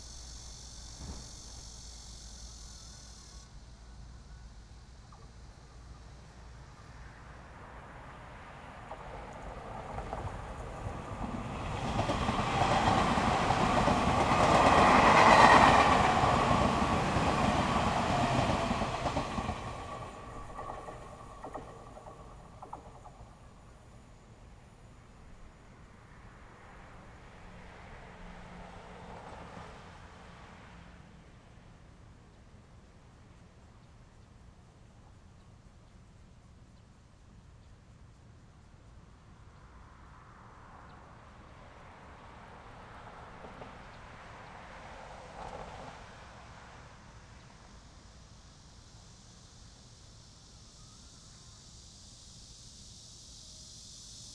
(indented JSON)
{
  "title": "民富路三段, Yangmei Dist. - the train running through",
  "date": "2017-08-12 16:01:00",
  "description": "Traffic sound, the train running through, Cicadas, Zoom H6 XY",
  "latitude": "24.93",
  "longitude": "121.10",
  "altitude": "122",
  "timezone": "Asia/Taipei"
}